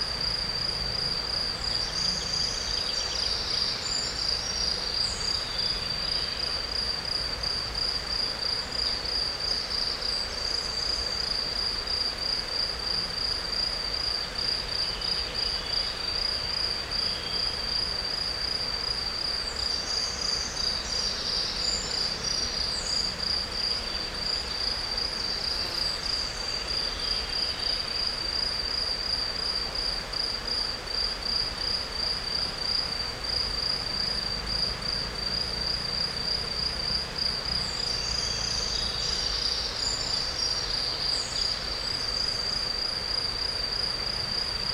Zona Turro, Muggio, Svizzera - S. Giovanni - Muggio, Switzerland
This soundscape was recorded next to the Breggia river in Valle di Muggio (Ticino, Switzerland), in the evening.
Bird's songs, crickets, insects, river.
It is a binaural recording, headphones are recommended.
Summer Solstice June 21, 2021